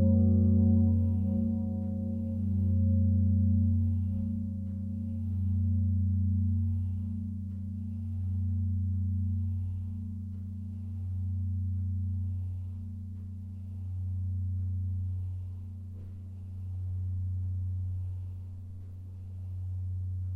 Malines, Belgique - Mechelen big bell
The eight tons bell of the Mechelen cathedral, recorded solo in the tower. The bell comes from the bellfounder ALJ Van Aerschodt. It's called Salvator, and date is 1844.
Mechelen, Belgium, 6 August 2014